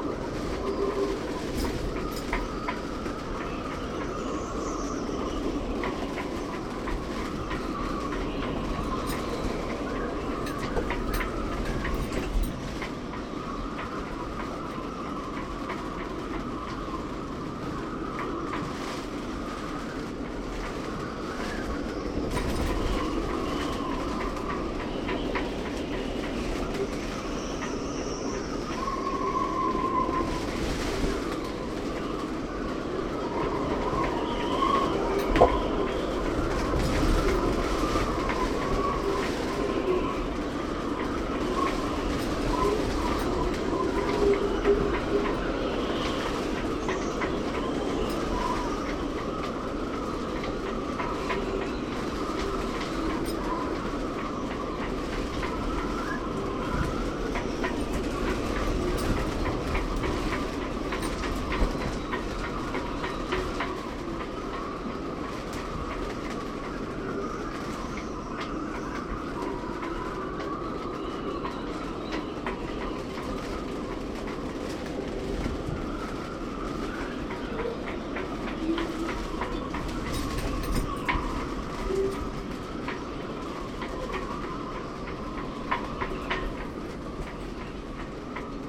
workum, het zool: marina, berth h - the city, the country & me: marina, aboard a sailing yacht
stormy night (force 7-8), short after midnight, the wind is flapping the tarp
the city, the country & me: july 21, 2008